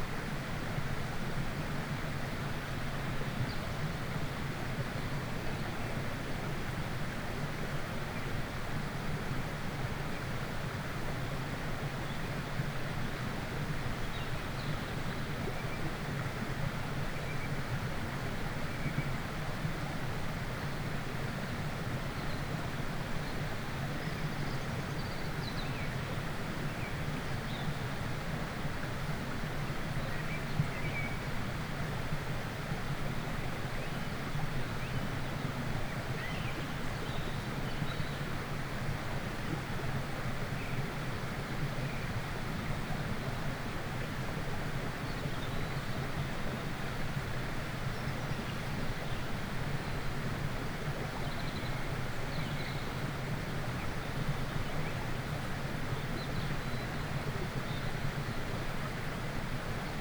Unnamed Road, Šumiac, Slovakia - Dawn Chorus in National Park Muránska Planina, Slovakia
Dawn chorus at Trsteník valley in National Park Muránska Planina.
12 May 2019, 03:00